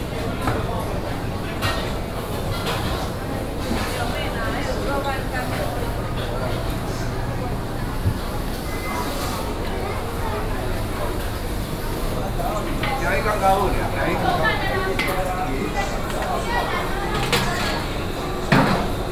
瑞芳美食街, 龍興里, New Taipei City - In the food court
In the food court, Many vendors and snack bar
Sony PCM D50+ Soundman OKM II
Ruifang District, New Taipei City, Taiwan, 5 June 2012